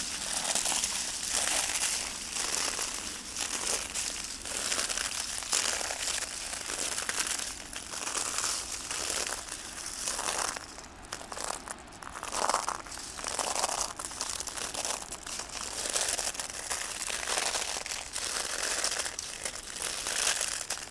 Noordwijk, Nederlands - Walking on shells
Walking on shells. It's an accumulation of Solen.
Noordwijk, Netherlands, 30 March 2019, 12:30